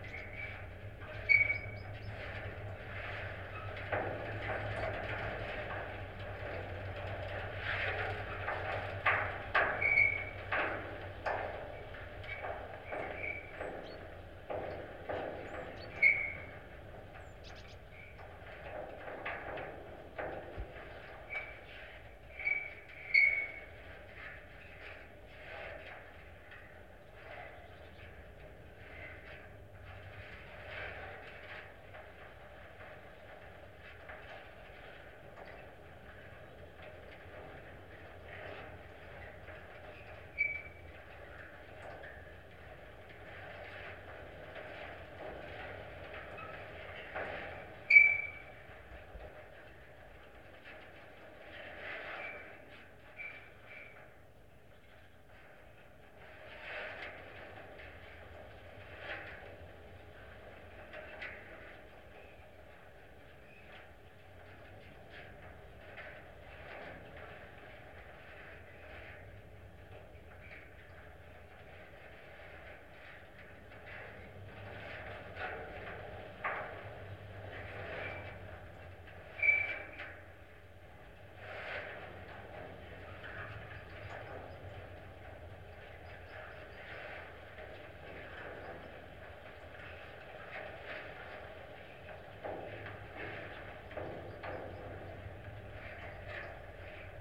Utena, Lithuania, metalic water tower
abandoned metalic watertower from soviet era. contact microphones placed on its body
Utenos rajono savivaldybė, Utenos apskritis, Lietuva, 18 September 2019